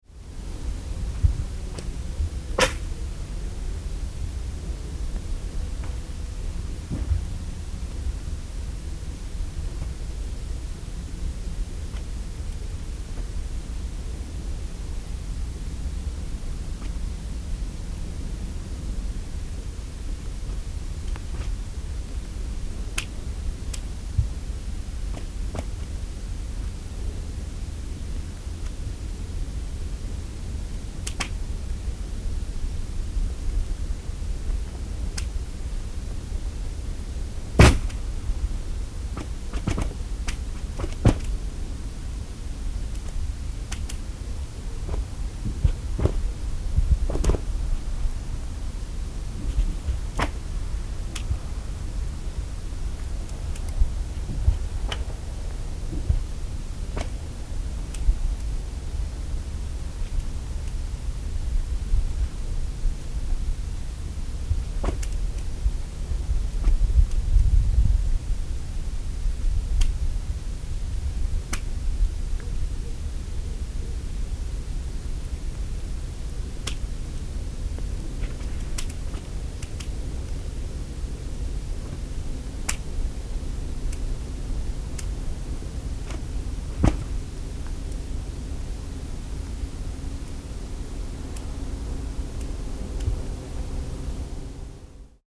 The cracking of ice on the river Shirshima.
Recorded on zoom h4n.
Треск льда на реке Ширшиме. Сильные морозы, лед трещит сам по себе. Запись шумновата, т.к. звук был тихий.